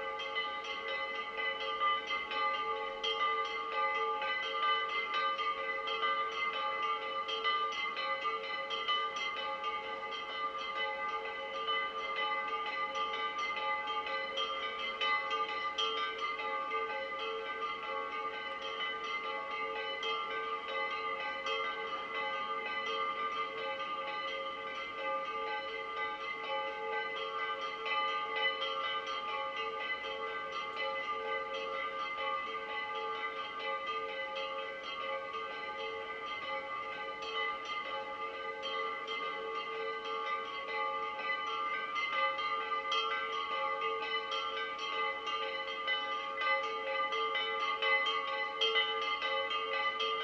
Ano Petali, Sifnos, Greece - Sifnos Bells - August 15th
August 15th is a major religious holiday in Greece. in the morning, all churches ring their bells. the recording is of this soundscape, facing east from the point on the map, with many small churches on either side of the valley at various distances. the original was 150 minutes long, so this is an edited version. (AT8022, Tascam DR40)